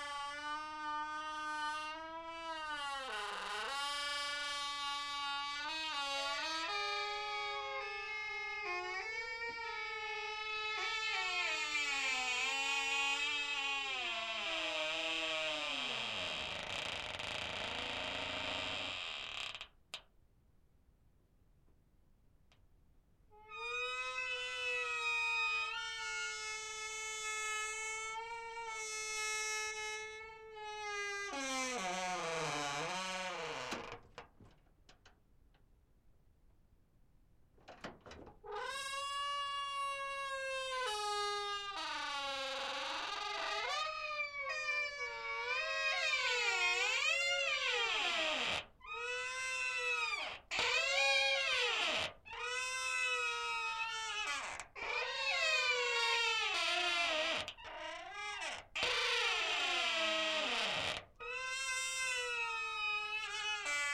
Krügerstraße, Mannheim, Deutschland - Favourite Door A1
First of my three favourite doors. Creaky hinges of a large metal door recorded with two AKG C 411 contact microphones placed on different parts of the door near the hinges Creaky hinges of a large metal door recorded with two AKG C 411 contact microphones placed on different parts of the door near the hinges and a Sound Devices 702 Field recorder.
Baden-Württemberg, Deutschland, 30 July 2021